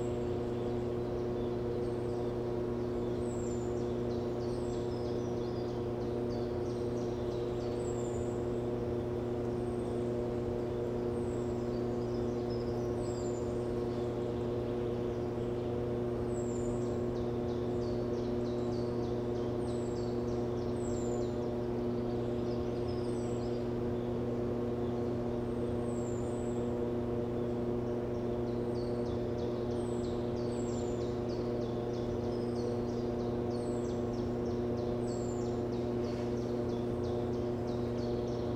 ERM fieldwork -mine air intake facility from 100 meters
ventilation air intake sound from an oil shale mine 70+ meters below